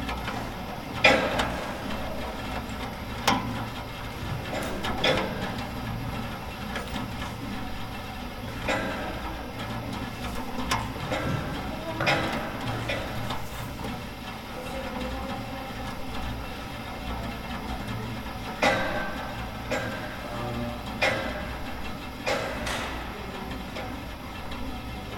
5454 S. South Shore Dr, Chicago, shoreland ballroom
shoreland ballroom, field, haunted, wind, metal, rattling